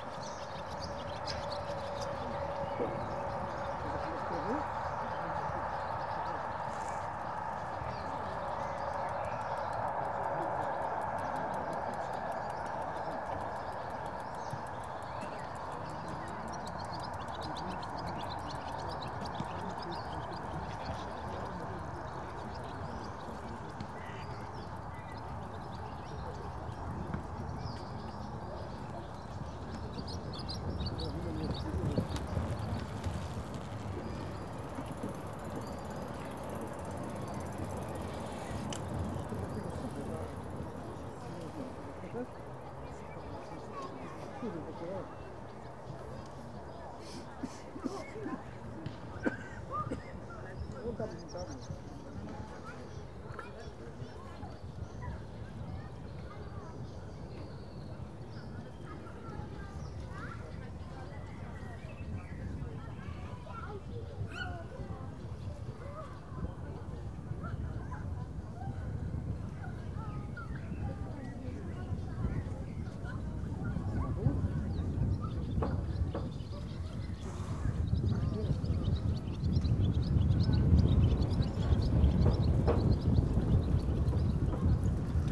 a bunch of people relaxing on the pier. bikes ticking. train passing in the distance - its vast swoosh is very characteristic for that place. swallows chasing each other under the pier.
województwo wielkopolskie, Polska, European Union, May 2013